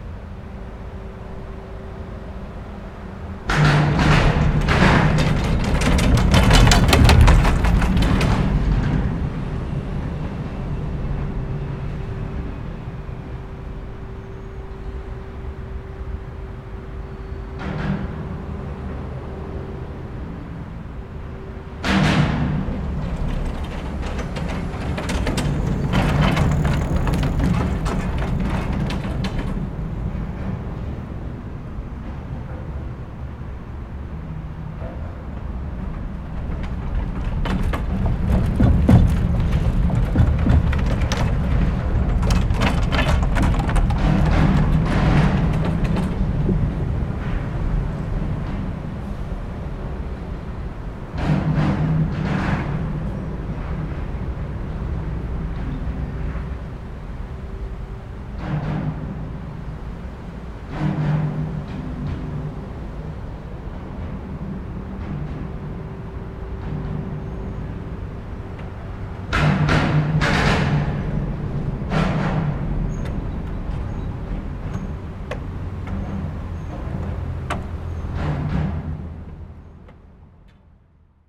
La Motte-Servolex, France - Passerelle
Passerelle cycliste de l'Avenue verte traversant la Leysse, en face un pont routier à grande circulation. ZoomH4npro posé sur une rambarde .